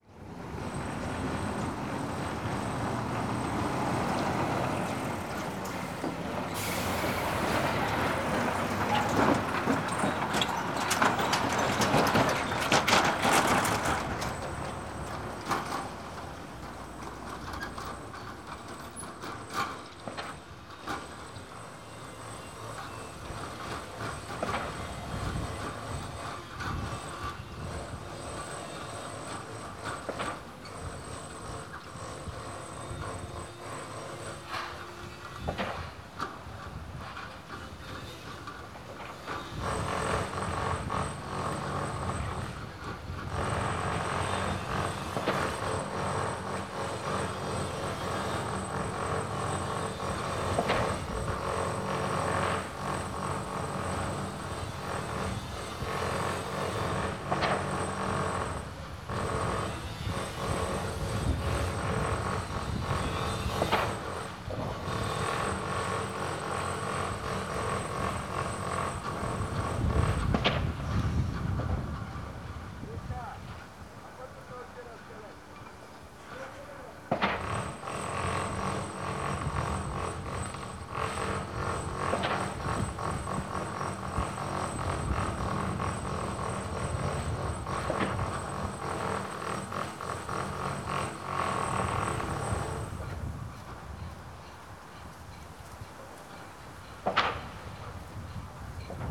at the beginning of the recording a truck passing just by the mics, then various construction sounds